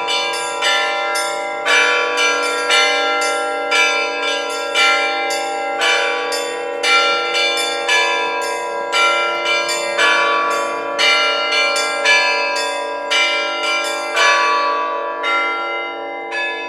sound recorded by members of the animation noise laboratory by zoom h4n
Приволжский федеральный округ, Россия, 22 July